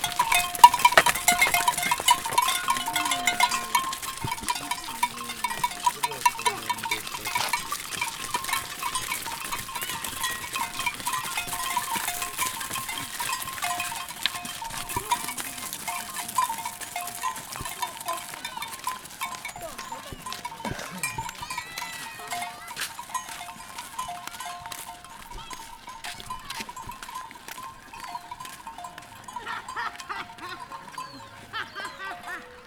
Zongwe, Sinazongwe, Zambia - Cows go home...
returning home from Zongwe FM studio, i've a surprise encounter with a herd of cows being chased home by a boy... i rush to get out a recorder... and just about manage making a recording... though with some handling sounds...
here's a recording at Choma street market, where i discover the bells being sold by a trader...